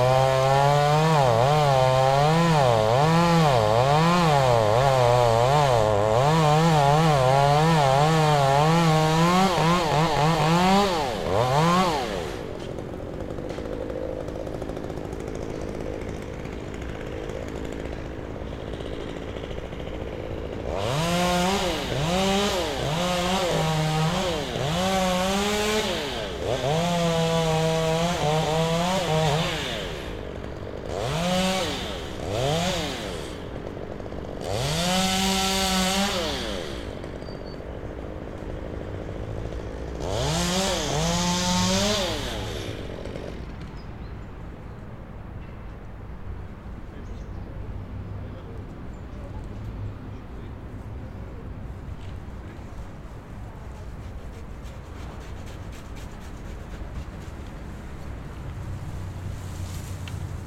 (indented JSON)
{
  "title": "Unnamed Road, Toulouse, France - winter chainsaw",
  "date": "2022-01-14 11:10:00",
  "description": "chainsaw in the park in winter\nCaptation ZOOM H6",
  "latitude": "43.61",
  "longitude": "1.43",
  "altitude": "142",
  "timezone": "Europe/Paris"
}